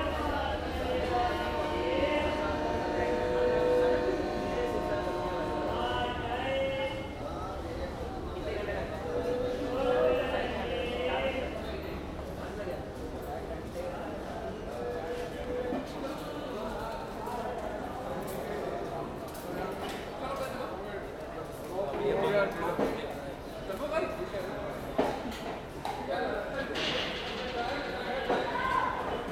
Allahabad Station
Ambiance gare centrale de Allahabad
Uttar Pradesh, India